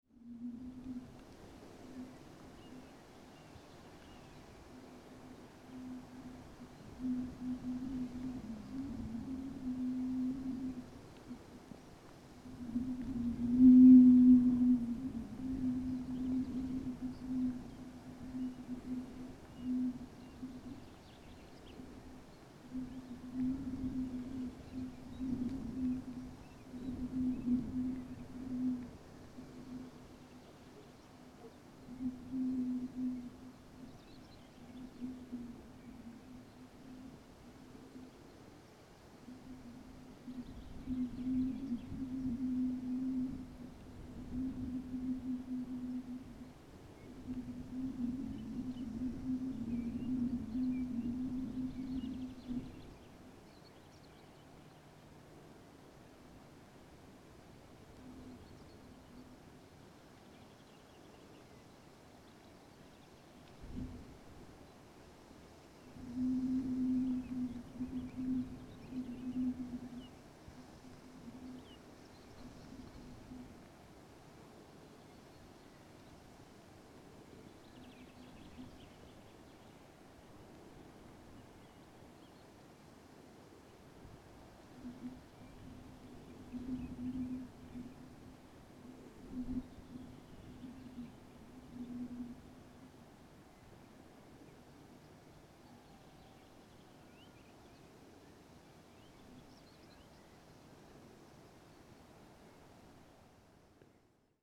Lithuania, Klykiai, wind in the bottle
on a hill, wind singing in the empty bottle
10 June 2011, ~15:00